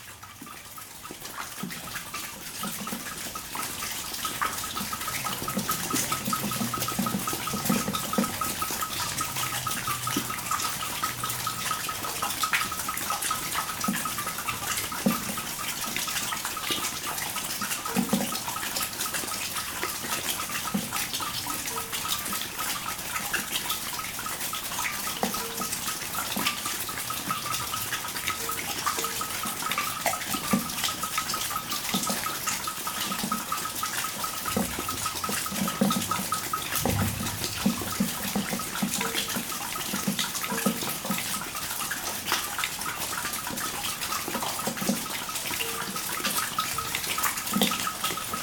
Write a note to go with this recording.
In an underground mine, a natural xylophone sound. Water is falling on thin wafers of calcite. This makes this amazing delicate sound.